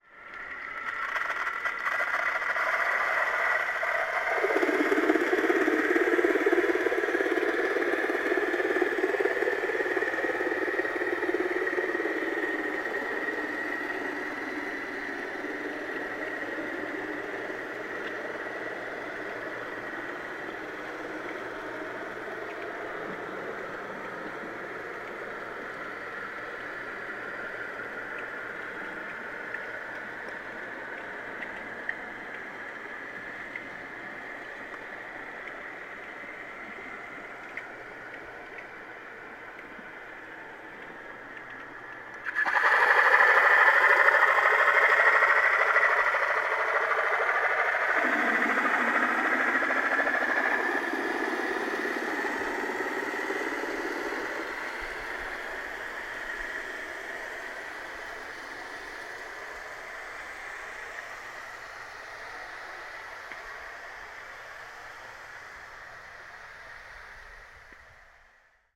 Underwater hydrophone recording of boats exiting South Haven Harbor to Lake Michigan